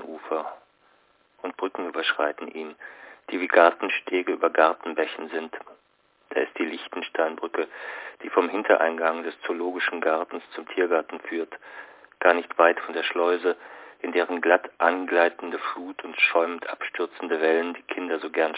Der Landwehrkanal (2) - Der Landwehrkanal (1929) - Franz Hessel